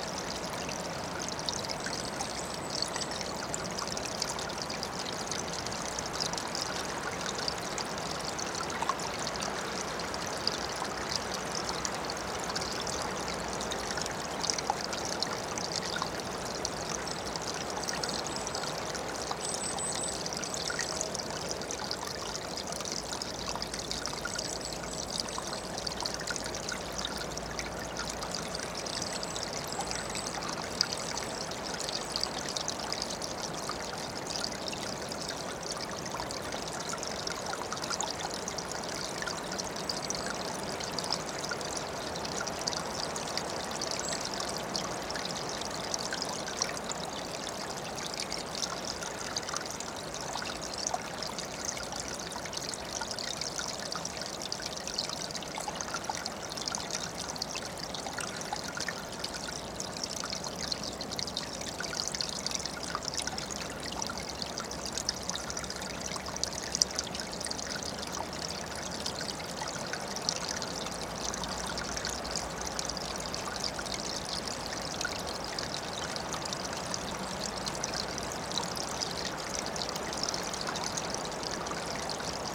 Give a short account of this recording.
My favourite place: a valley with small river. Three parts recording. First part is atmosphere of the place, in the second part mics are right on a tiny ice of river and third part - contact mics on iced branch